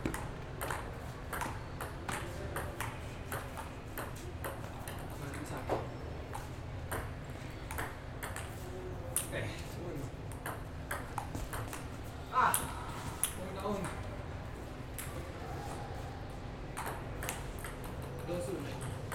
Universidad de Medellín, Medellín, Antioquia, Colombia - Ambiente zona de ping pong Coliseo UdeM
Descripción: Coliseo de la Universidad de Medellín (Zona de Ping Pong).
Sonido tónico: pelotas de Ping Pong, gente hablando y saltando, vehículos transitando.
Señal sonora: silbato, pitos de vehículos.
Técnica: Grabación con Zoom H6 y micrófono XY
Grupo: Luis Miguel Cartagena, María Alejandra Flórez Espinosa, María Alejandra Giraldo Pareja, Santiago Madera Villegas y Mariantonia Mejía Restrepo.